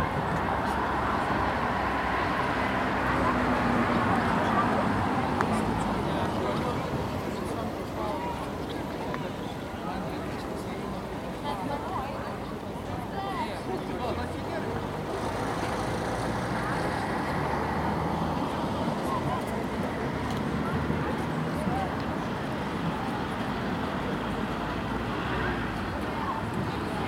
Nida, Lithuania - Nida Centre Sculpture
Recordist: Saso Puckovski. Centre of Nida, public park, the recorder was placed on top of the metal sculpture on a usual day. Random tourists, people walking, bikes. Recorded with ZOOM H2N Handy Recorder, surround mode.